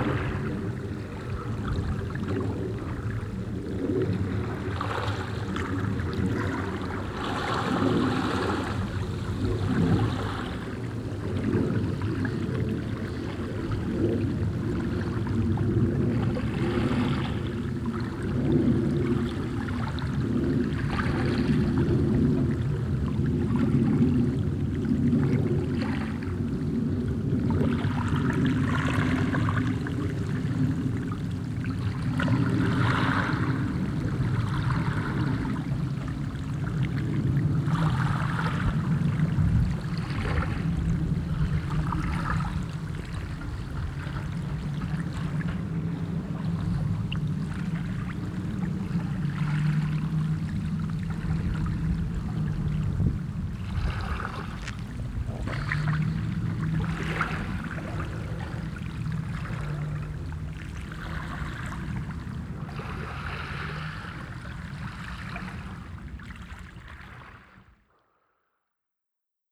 Sveio, Norwegen - Norway, Keksje, waves, in mild wind
At the stoney coast of the Bomlafjord on a mild windy summer morning. In the distance a plane crossing the sky.
international sound scapes - topographic field recordings and social ambiences